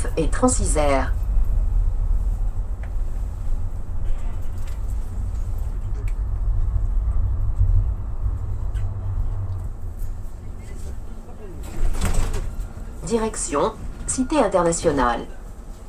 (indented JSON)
{
  "title": "Agn s at work Gares RadioFreeRobots",
  "latitude": "45.19",
  "longitude": "5.72",
  "altitude": "216",
  "timezone": "GMT+1"
}